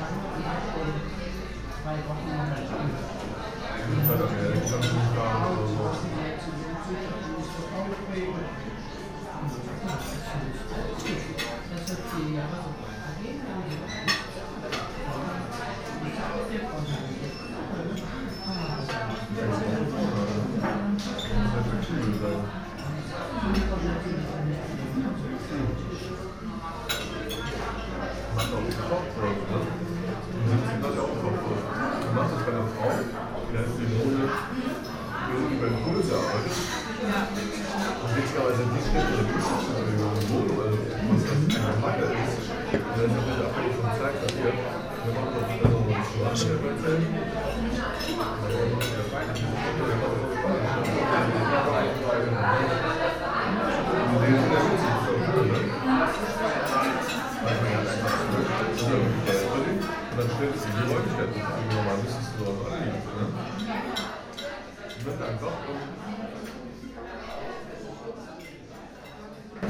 cologne, mainzer str, mainzer hof
inside the mainzer hof restaurant on an early evening
soundmap nrw: social ambiences/ listen to the people in & outdoor topographic field recordings